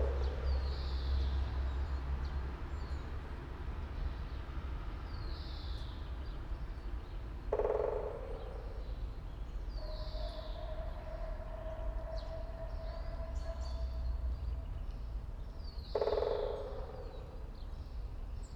all the mornings of the ... - apr 13 2013 sat